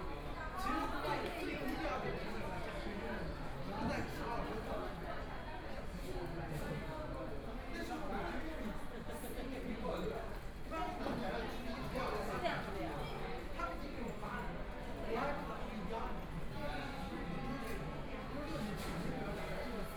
Wujiaochang, Shanghai - Fast-food restaurants
In the underground mall, Fast-food restaurants(KFC), Binaural recording, Zoom H6+ Soundman OKM II
Yangpu, Shanghai, China, 21 November